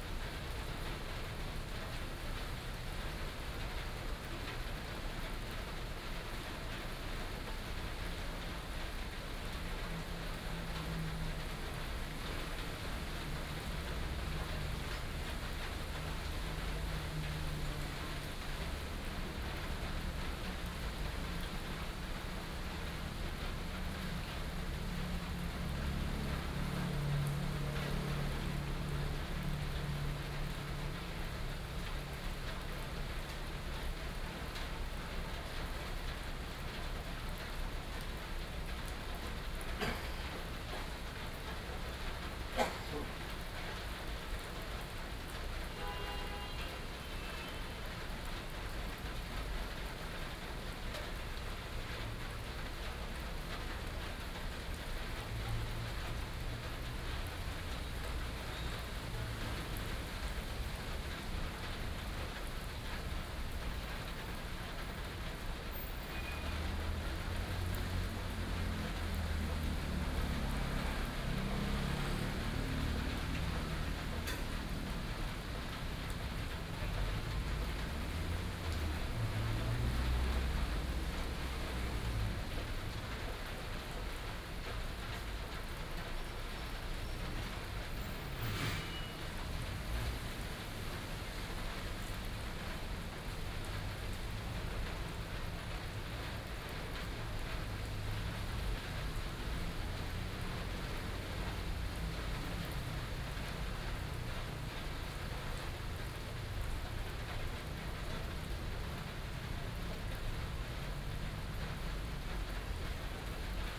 黎孝公園, Taipei City - Rainy Day
Traffic Sound, in the park, Rainy Day